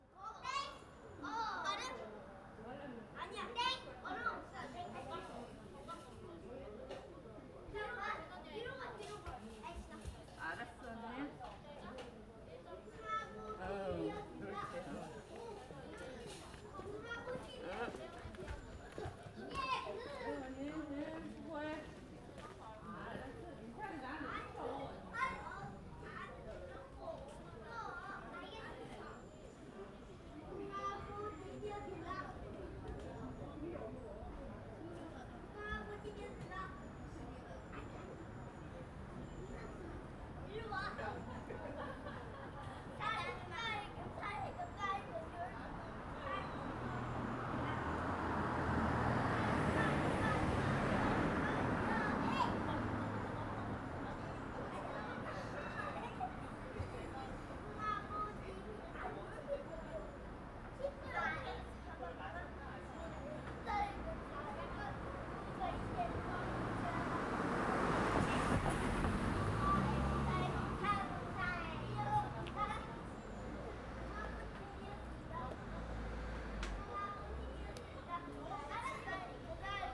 Bangbae District, Family Meeting on a Holiday, children playing a traditional game
27 September 2019, ~18:00